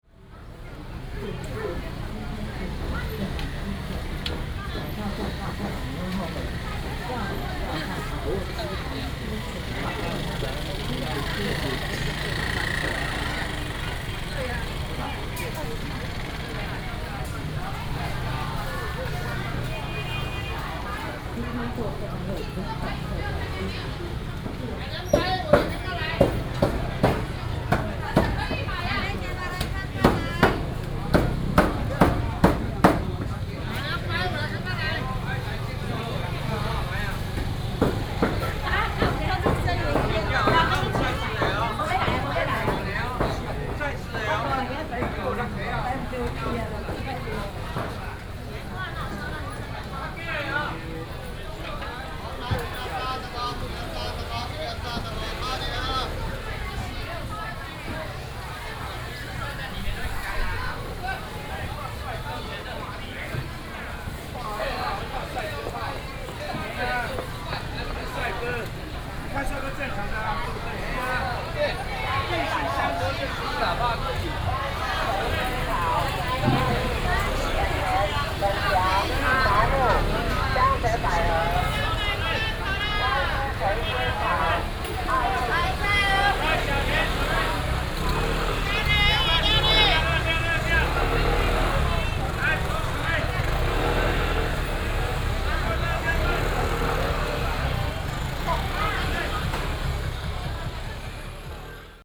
Ln., Zhongzheng Rd., Longtan Dist. - traditional market
Walking in the market, Traffic sound